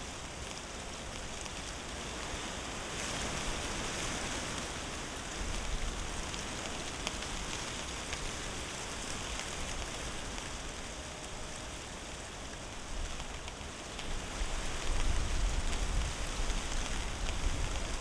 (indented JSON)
{
  "title": "Berlin, Tempelhofer Feld - wind in oak bush",
  "date": "2020-02-28 14:10:00",
  "description": "windy Winter day, wind in an small oak tree, dry leaves rattling in the wind\n(SD702, Audio Technica BP4025)",
  "latitude": "52.48",
  "longitude": "13.40",
  "altitude": "39",
  "timezone": "Europe/Berlin"
}